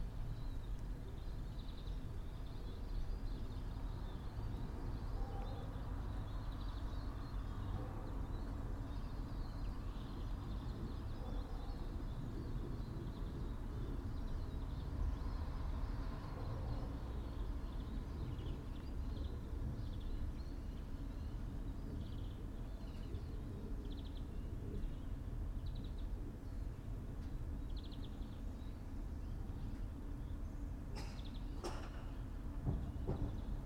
all the mornings of the ... - mar 24 2013 sun